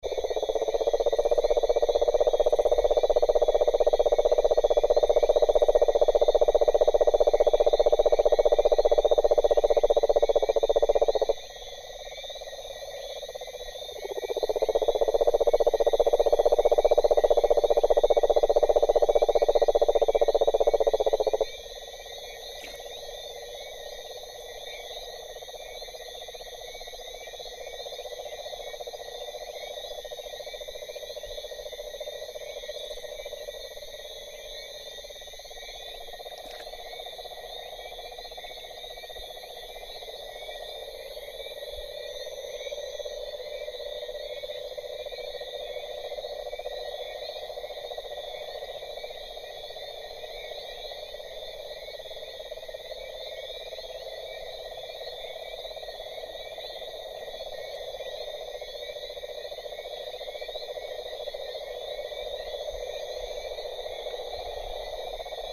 {
  "title": "Saint-claude",
  "date": "2010-08-01 21:23:00",
  "description": "Chants crapauds bœuf saison des amours",
  "latitude": "16.02",
  "longitude": "-61.68",
  "altitude": "556",
  "timezone": "America/Guadeloupe"
}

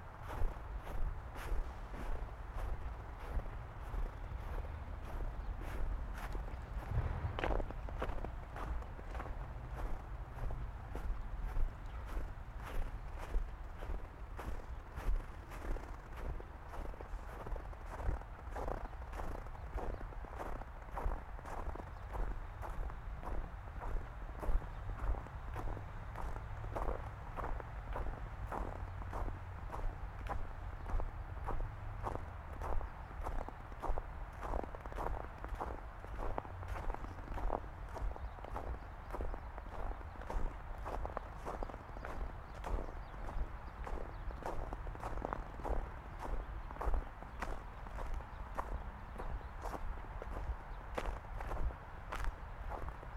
{
  "title": "Carpenter Ave, Newburgh, NY, USA - Walking Downing Park",
  "date": "2021-01-29 09:40:00",
  "description": "Morning walk through Downing Park in the snow on my way to the grocery store. Zoom F1 w/ XYH-6 Stereo Mic",
  "latitude": "41.51",
  "longitude": "-74.02",
  "altitude": "81",
  "timezone": "America/New_York"
}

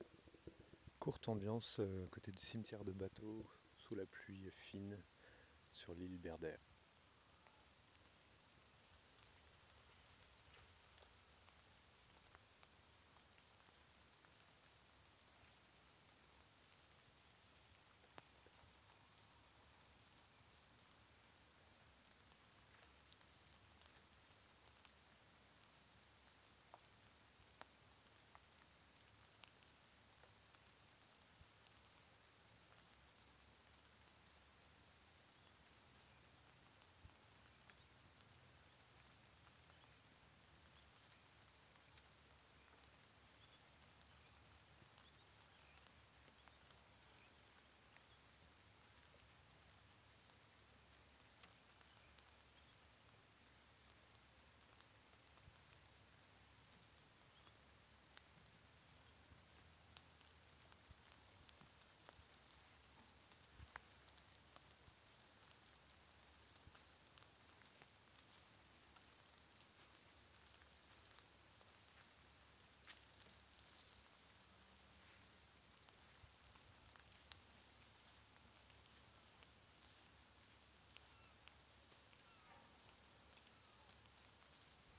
{
  "title": "Rue de Berder, Larmor-Baden, France - amb pluie courte",
  "date": "2021-08-03 09:43:00",
  "description": "Ambiance de pluie légère sur une plage déserte avec des arbres à côté.",
  "latitude": "47.58",
  "longitude": "-2.89",
  "altitude": "9",
  "timezone": "Europe/Paris"
}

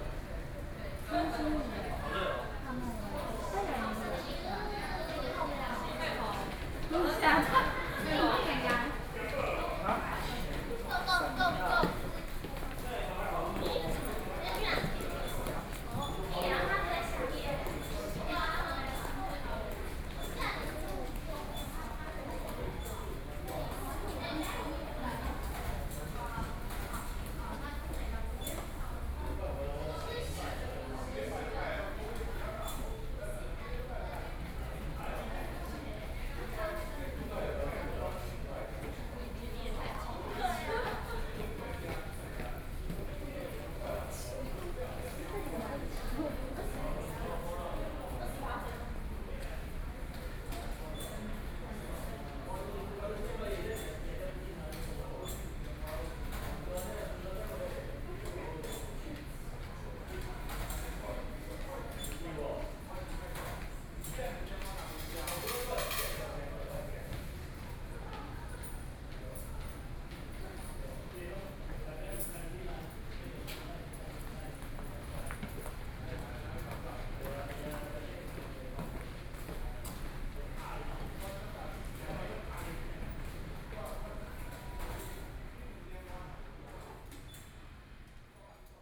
{
  "title": "Puxin Station - Station hall",
  "date": "2013-08-14 12:27:00",
  "description": "in the Station hall, Sony PCM D50+ Soundman OKM II",
  "latitude": "24.92",
  "longitude": "121.18",
  "altitude": "178",
  "timezone": "Asia/Taipei"
}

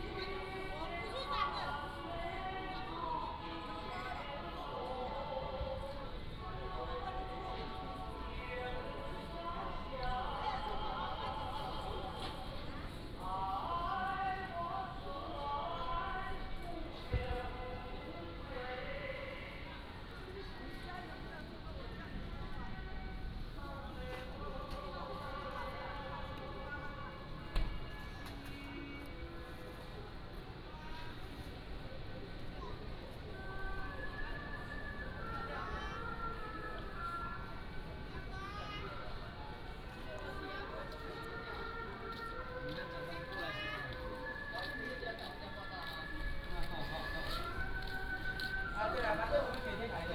{
  "title": "坂里村, Beigan Township - Small village",
  "date": "2014-10-13 15:35:00",
  "description": "Small village, Small square in the village of roadside",
  "latitude": "26.22",
  "longitude": "119.97",
  "altitude": "17",
  "timezone": "Asia/Taipei"
}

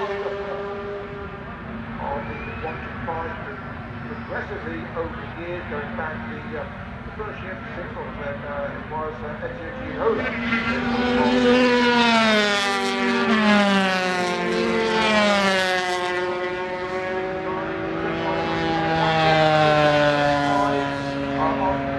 {
  "title": "Castle Donington, UK - British Motorcycle Grand Prix 2002 ... 125 ...",
  "date": "2002-07-12 13:15:00",
  "description": "British Motorcycle Grand Prix ... 125 qualifying ... one point stereo mic to minidisk ... commentary ... a young Danny Pedrosa with a second on the grid ..?",
  "latitude": "52.83",
  "longitude": "-1.37",
  "altitude": "81",
  "timezone": "Europe/London"
}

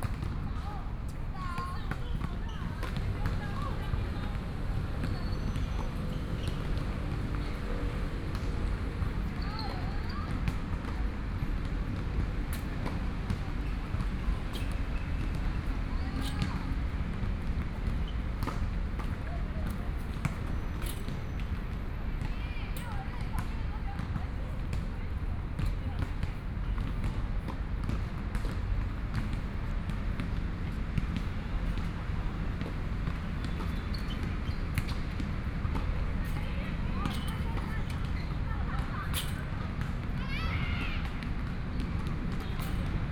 {
  "title": "NATIONAL TAIWAN UNIVERSITY COLLEGE OF MEDICINE - Next to the stadium",
  "date": "2014-03-21 20:10:00",
  "description": "Next to the stadium, Basketball, Tennis, Environmental Noise, Traffic Sound\nSony PCM D100 + Soundman OKM II",
  "latitude": "25.04",
  "longitude": "121.52",
  "altitude": "12",
  "timezone": "Asia/Taipei"
}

